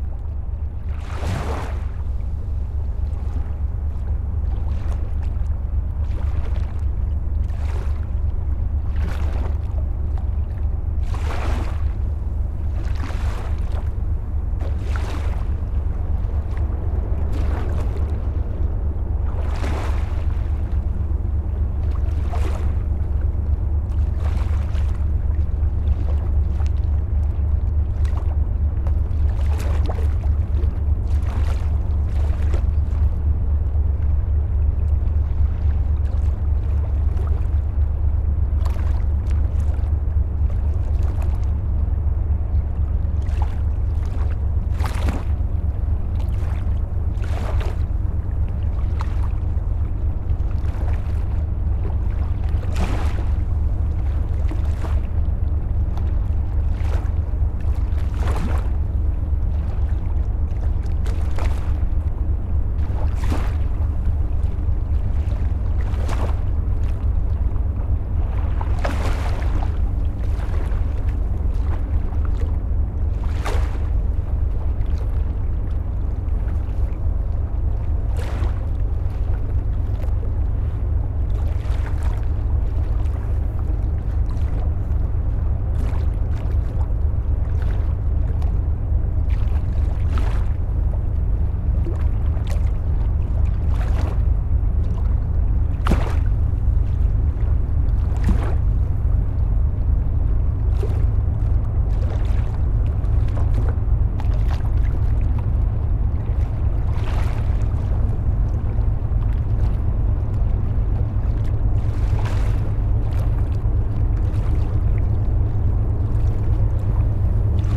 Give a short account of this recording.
A boat is dredging the Seine river, it makes a permanent deaf sound.